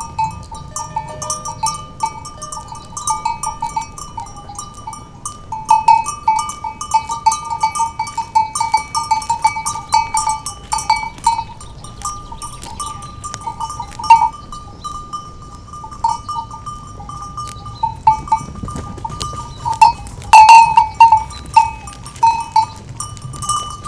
Duruelo de la Sierra, Soria, Spain - Castroviejo
Paisagem sonora de Castroviejo em Duruelo de la Sierra. Mapa Sonoro do Rio Douro. Castoviejo in Duruelo de La Sierra, Soria, Spain. Douro River Sound Map.